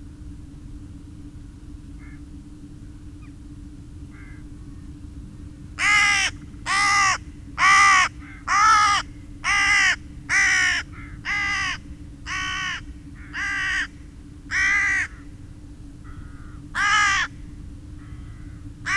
Luttons, UK - crows and rook soundscape ...
Crows and rooks soundscape ... flock flying over then spiralling away ... open lavalier mics on clothes pegs clipped to sandwich box parked on field boundary ... background noise ...